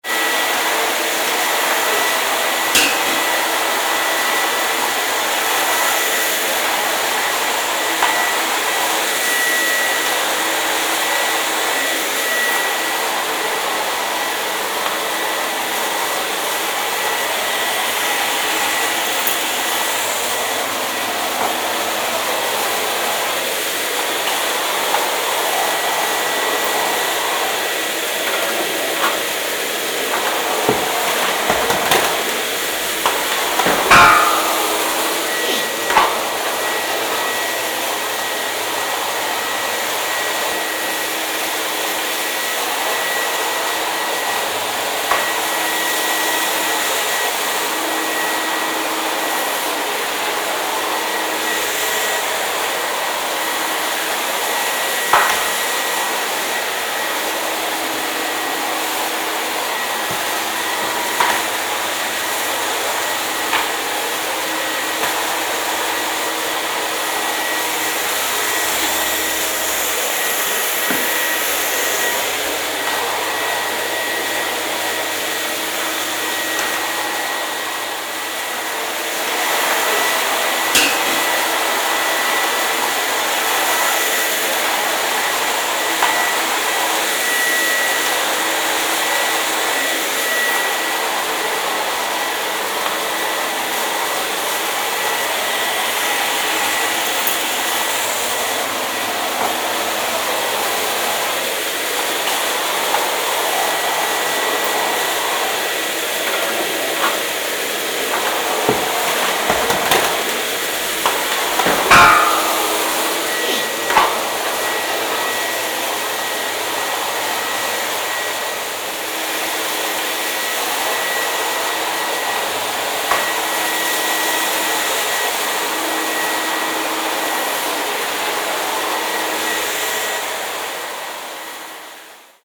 vacuum cleaner, loft - vacuum cleaner, loft, cologne, volksgartenstr.10
vacuum cleaner, indoor recording, 1998. - project: "hasenbrot - a private sound diary"